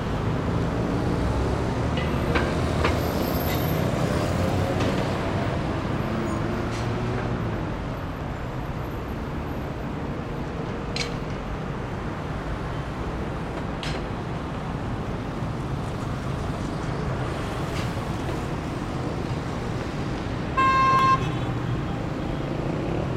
Tehran, Shahid Motahari St, No., Iran - Traffic